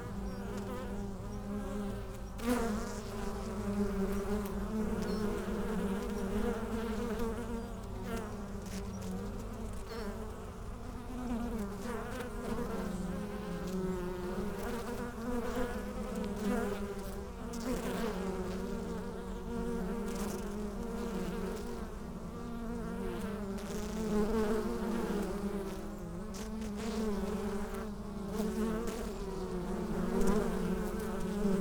Berlin, Alter Garnisonsfriedhof, cemetery, busy bees on a sunny afternoon in early spring
(Sony PCM D50, Primo EM172)